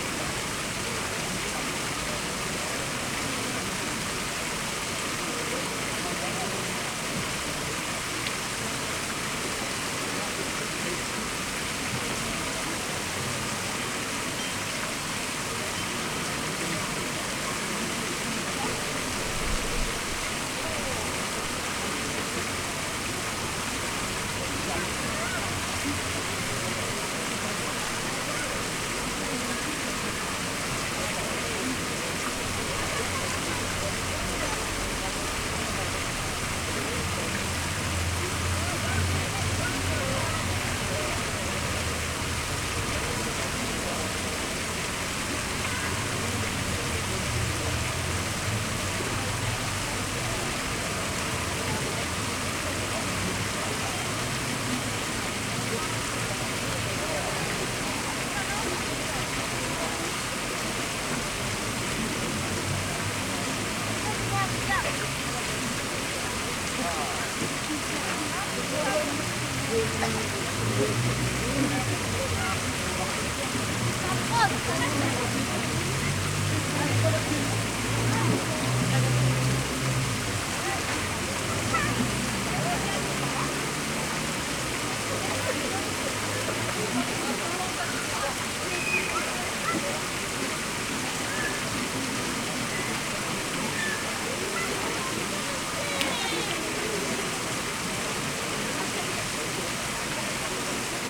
Fontaine Place Royale Nantes
(( rénovation de la place Royale terminée ))
Fontaine représentant la Loire Majestueuse entourée de ses 4 confluents. Fontaine réalisée par Daniel Ducommun de Locle, Guillaume Grootaërs et Simon Voruz en 1865.